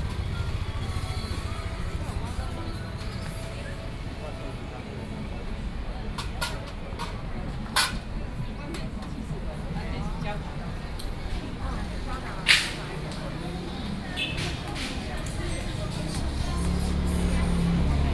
Hemu Rd., 宜蘭市大東里 - Night market
Night market, The night market is being prepared, Traffic Sound, Very hot weather
7 July 2014, ~18:00